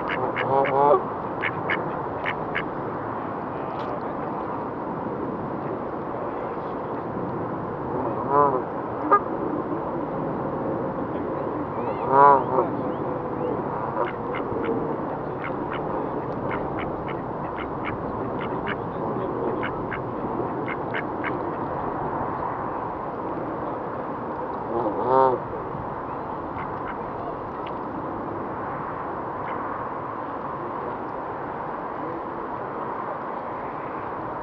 Epping Forest, Wanstead Flats, London, UK - Geese/Ducks at Wanstead Flats
Sun is setting, families etc enjoying the evening; geese (100s of them) and ducks swimming, some swans. Lots of flies/water insects in and around the waters edge. Getting some funny looks, a few questions asked..
Thanks